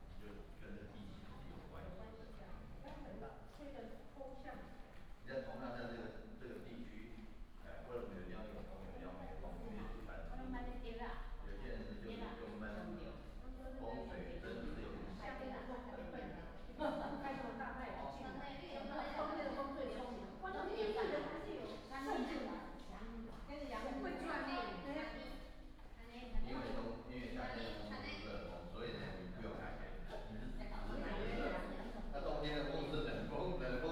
舊百吉隧道, Daxi Dist. - into the old tunnel
Go into the old tunnel, Tourists, Traffic sound
Taoyuan City, Daxi District, 舊百吉隧道